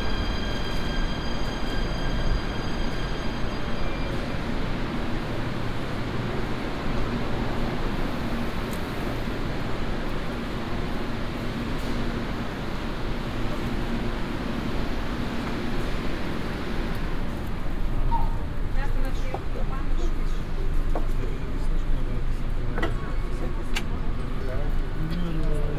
Acropoli-Syngrou, Athens, Greece - (533) Metro ride from Acropoli to Syngrou
Binaural recording of a metro ride with line M2 from Acropoli to Syngrou.
Recorded with Soundman OKM + Sony D100.